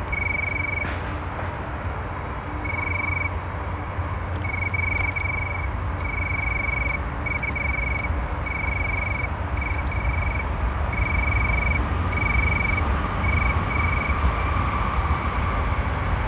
Gewerbepark in Duesseldorf - Lichtenbroich german cicades (or what's that?)